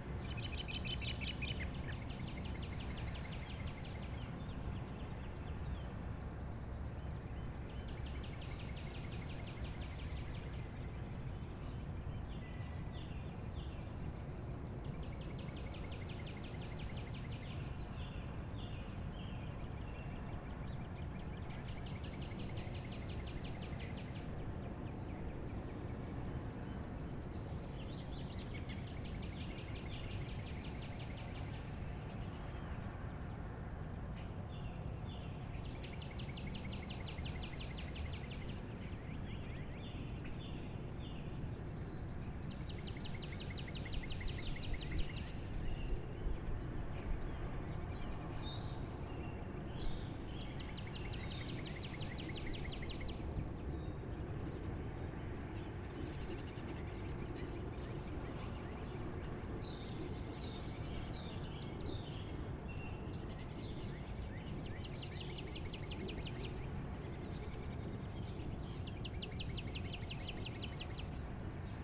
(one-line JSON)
{"title": "Riverside Fitness Park, Bluffton, IN, USA - Early morning birdsong, Riverside Fitness Park, Bluffton, IN", "date": "2019-04-13 07:45:00", "description": "Early morning birdsong, Riverside Fitness Park, Bluffton, IN", "latitude": "40.74", "longitude": "-85.17", "altitude": "247", "timezone": "America/Indiana/Indianapolis"}